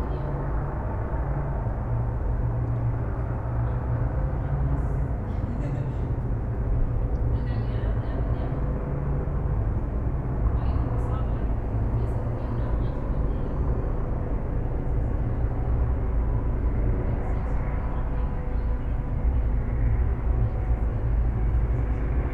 Berlin Bürknerstr., backyard window - Mayday sounds in the yard
sounds of mayday 2015 heard in my backyard
(Sony PCM D50, Primo EM172)
Berlin, Germany, 1 May 2015, 20:30